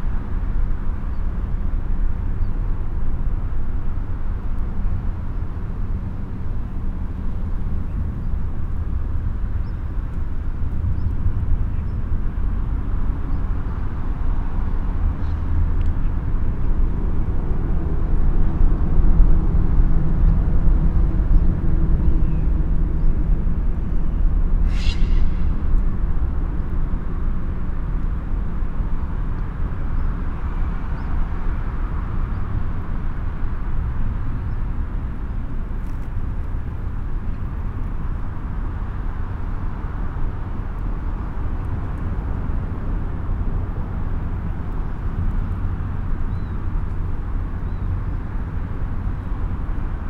Vilvorde, Belgique - Highway overpass
The famous highway overpass called 'viaduc de Vilvorde' or 'viaduct van vilvoorde'. Recorded below the bridge, it's a very-very-very depressive place, especially by winter.